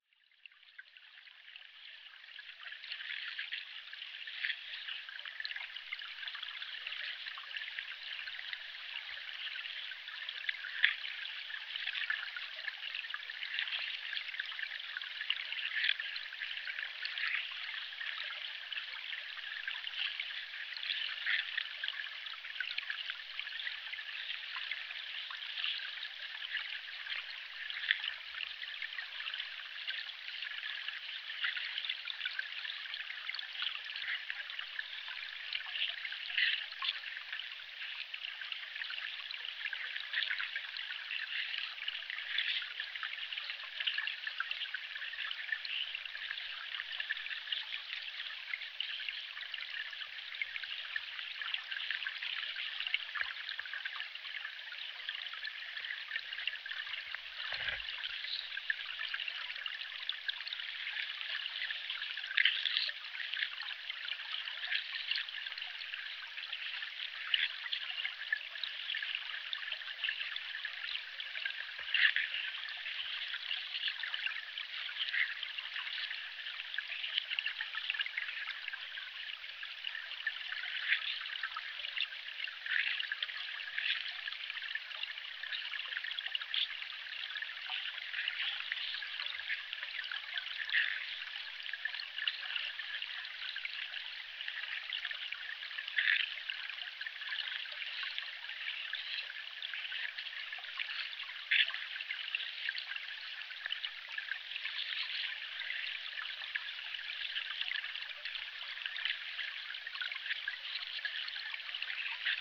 hydrophone in the murmerring river